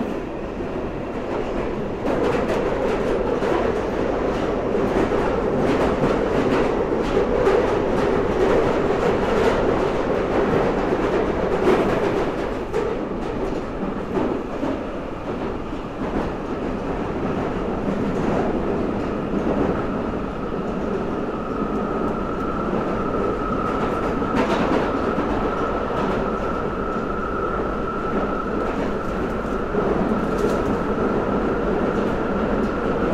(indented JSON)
{
  "title": "Underground from Waterloo Station to Tottenham Court Road",
  "date": "2010-02-03 10:00:00",
  "description": "Travelling on London Underground train from Waterloo to Tottenham Court Road Stations.",
  "latitude": "51.50",
  "longitude": "-0.11",
  "altitude": "16",
  "timezone": "Europe/London"
}